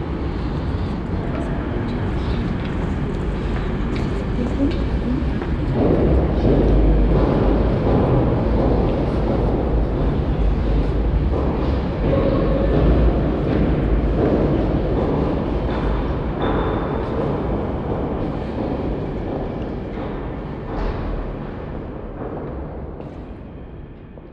Central Area, Cluj-Napoca, Rumänien - Cluj, orthodox Cathedral
Inside the orthodoy cathedral. The sounds of the queeking wooden doors, steps and coughing of visitors in the wide open stone hall and on the wooden steps, a mysterical melody, later in the background the sound of a religious ceremony choir coming from the caverns of the building.
international city scapes - topographic field recordings and social ambiences
Romania, 15 November 2012, 10:27